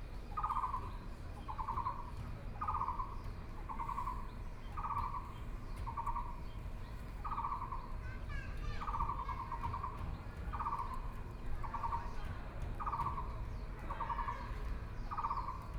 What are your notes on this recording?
Frogs sound, Insects sound, Birdsong